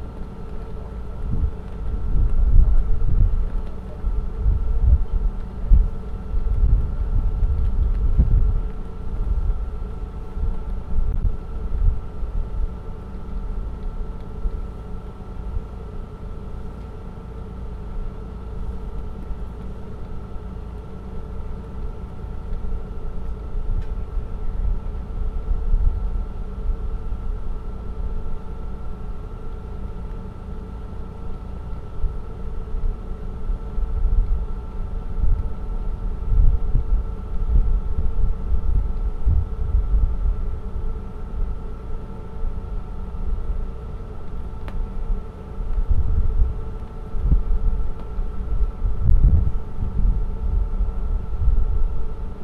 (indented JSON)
{
  "title": "Loyalist Pkwy, Glenora, ON, Canada - Glenora - Adolphustown ferry",
  "date": "2021-11-17 15:00:00",
  "description": "Recorded from the car window on the ferry from Glenora to Adolphustown in Prince Edward County, Ontario, Canada. Zoom H4n. Much more wind noise than I would have liked, but removing it with a low-cut filter would also have affected other parts where that particular range is desired (engine noise of ferry, etc.)",
  "latitude": "44.04",
  "longitude": "-77.06",
  "altitude": "73",
  "timezone": "America/Toronto"
}